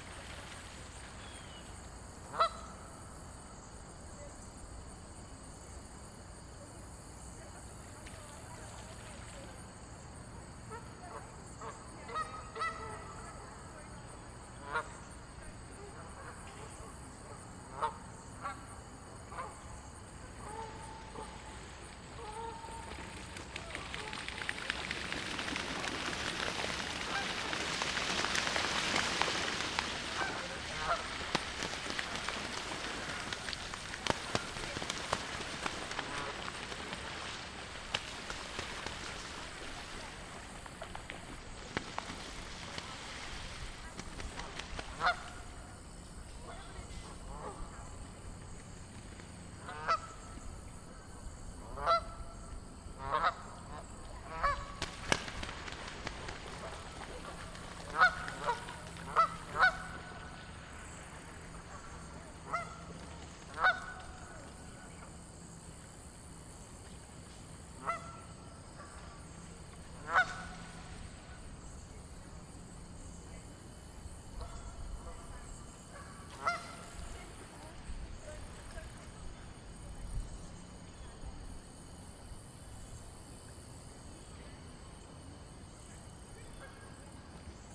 Sounds heard sitting on the shore of Kunkel Lake (Canadian Geese slapdown), Ouabache State Park, Bluffton, IN, 46714, USA
Indiana, USA, 20 October 2019, 15:26